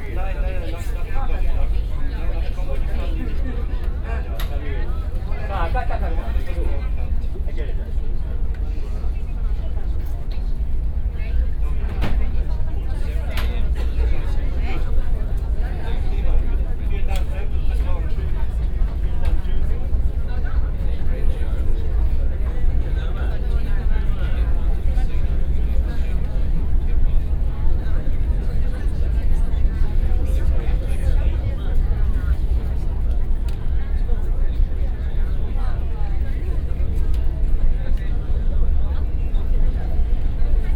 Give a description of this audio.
the ustica aliscafo ferryboat is leaving to the island of lipari